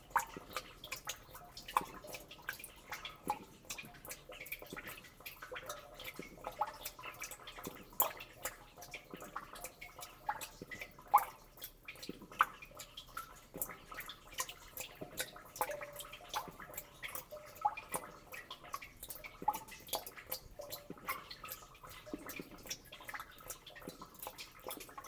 Utena, Lithuania, rain sewer well
rhytmic water dripping in rain drenage well. recorded with Instamic microphone
29 November, 19:05